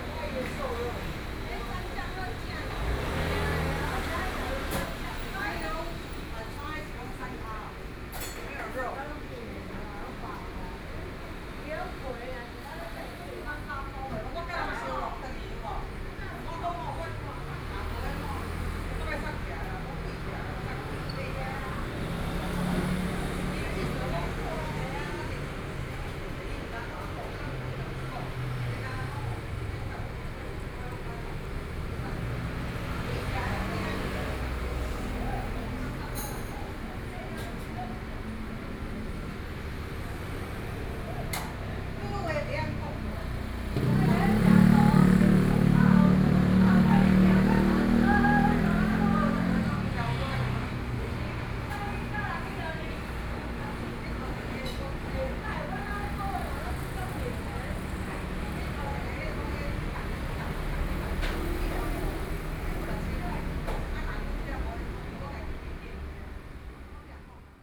鹽埕區中原里, Kaoshiung City - In the restaurant
In the restaurant, Traffic Sound
13 May 2014, ~21:00